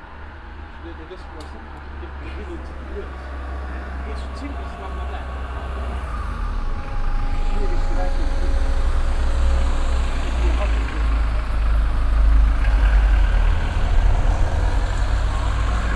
bourscheid, schlasswee, traffic
At the small towns main street in the morning time. Two bicycle riders coming up the hill and stop to meet and talk in local dialect. Meanwhile a passenger and some cars passing by.
Project - Klangraum Our - topographic field recordings, sound objects and social ambiences